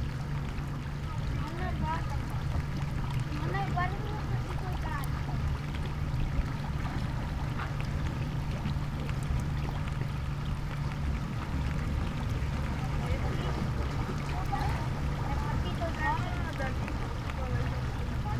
below the frame of lighthouse iron doors
Novigrad, Croatia, 13 July